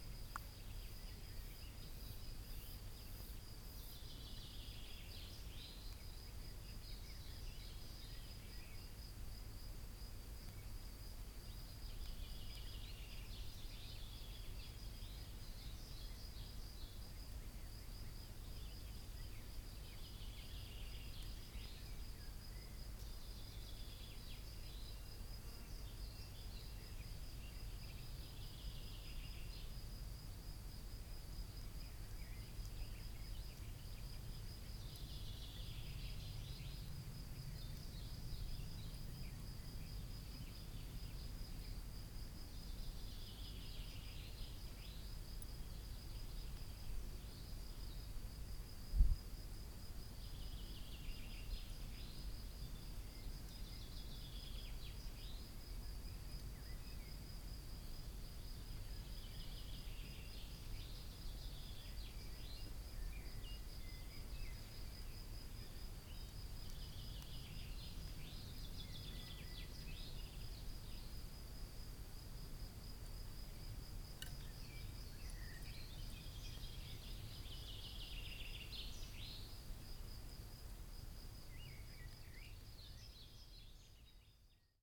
2x PZM microphones. Birds, Crickets, Bees.
Gelderland, Nederland